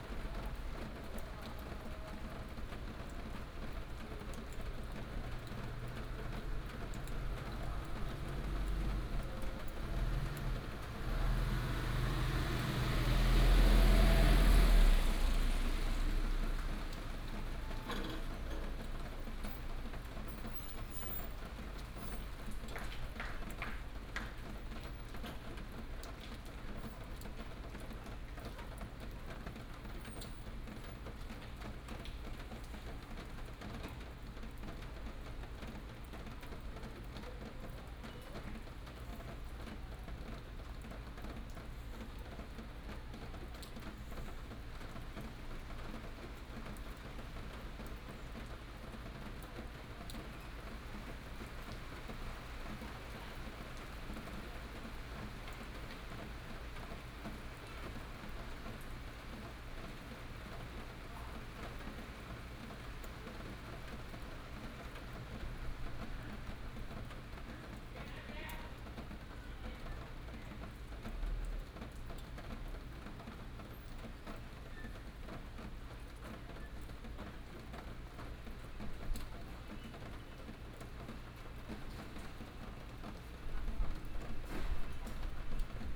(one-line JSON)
{"title": "南島金崙診所, Jinlun, Taimali Township - rain", "date": "2018-04-13 19:26:00", "description": "rain, traffic sound, Village Message Broadcast Sound\nBinaural recordings, Sony PCM D100+ Soundman OKM II", "latitude": "22.53", "longitude": "120.96", "altitude": "41", "timezone": "Asia/Taipei"}